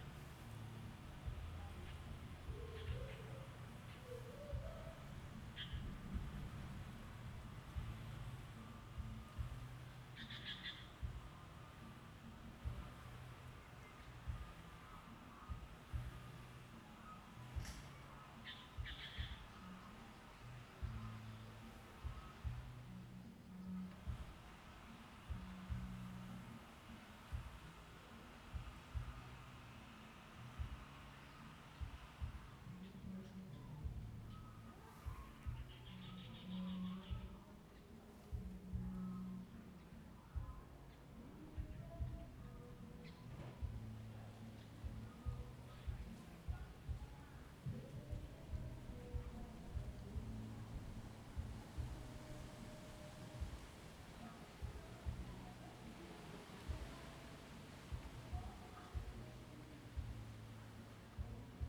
In the temple plaza, Birdsong, Traffic Sound, Small tribes
Zoom H2n MS+ XY
8 October 2014, 14:43